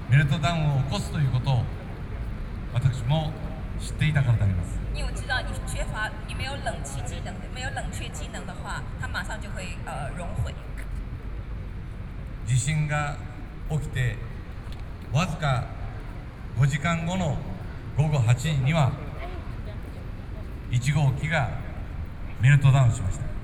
Liberty Square, Taipei - Speech - anti-nuclear
Former Prime Minister of Japan （Mr. Naoto Kan かん なおと）, Speech on anti-nuclear stance and the Japanese experience of the Fukushima Daiichi nuclear disaster, Sony PCM D50 + Soundman OKM II